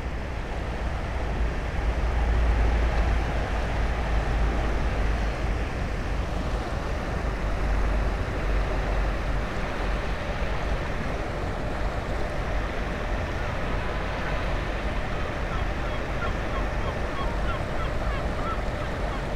near dam, Melje, Maribor, Slovenia - moon rising above the canal
water flux, river gulls, dam, distant traffic
18 August 2013, 19:52